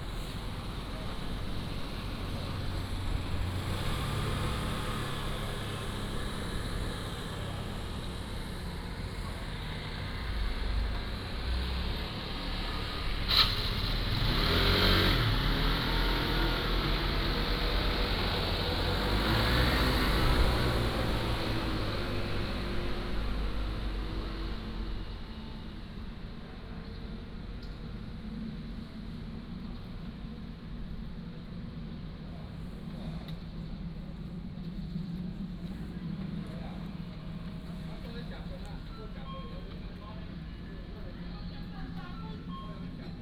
湖西村, Huxi Township - In the street
In the street, Traffic Sound, next to the convenience store